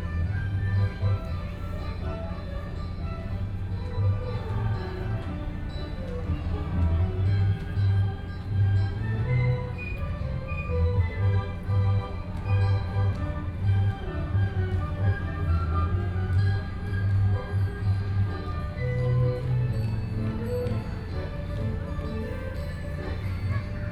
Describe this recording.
Puja, Please turn up the volume a little, Binaural recordings, Sony PCM D100 + Soundman OKM II